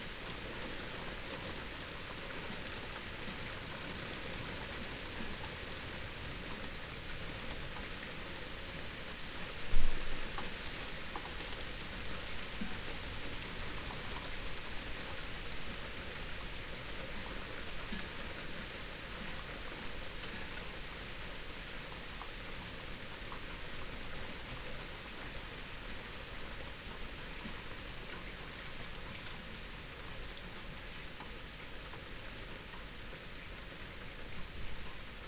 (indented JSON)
{
  "title": "Berlin Bürknerstr., backyard window - Hinterhof / backyard ambience, summer rain",
  "date": "2022-08-18 18:40:00",
  "description": "18:40 Berlin Bürknerstr., backyard window - Hinterhof / backyard ambience",
  "latitude": "52.49",
  "longitude": "13.42",
  "altitude": "45",
  "timezone": "Europe/Berlin"
}